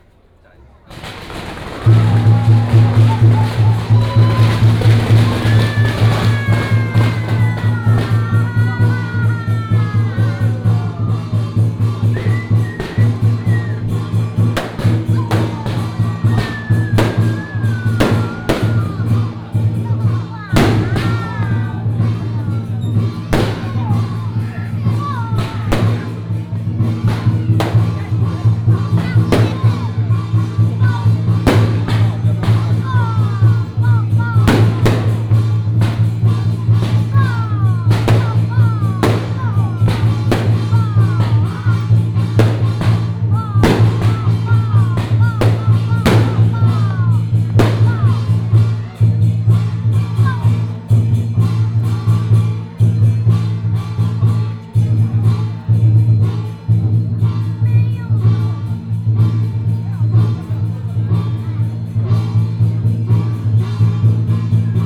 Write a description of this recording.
temple fair, Firecrackers and fireworks sound